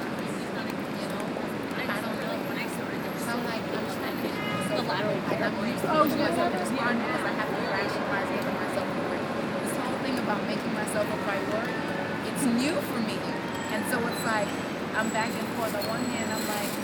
New York, City Hall Park, endless conversation.